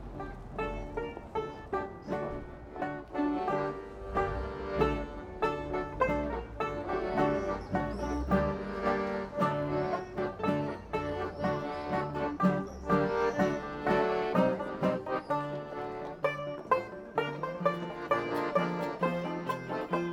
Berlin - Gudruns Kulturraum, Klezmer sounds
the recordist, on his way home, was attracted by klezmer like sounds in front of Gudruns Kulturraum.